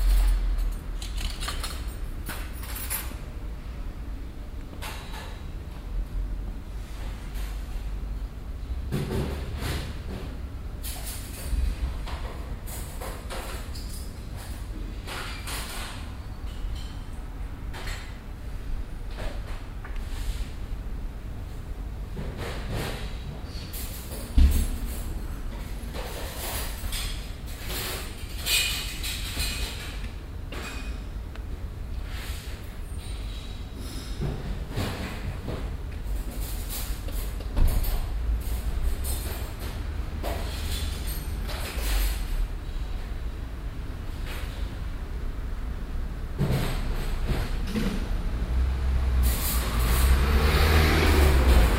cologne, mainzerstr, altenpflegeheim, küche
soundmap: köln/ nrw
küchenarbeit im altenpflegeheim mainzerstr, mittags - geschirr und teller geräusche dazu strassenverkehr
project: social ambiences/ listen to the people - in & outdoor nearfield recordings